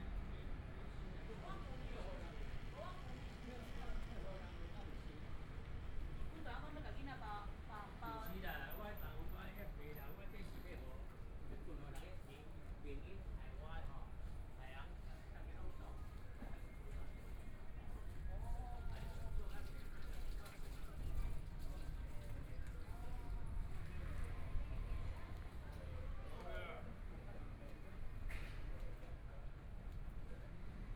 中山區, Taipei City - Soundwalk
Walking in the small streets, Through different streets, Binaural recordings, Zoom H4n+ Soundman OKM II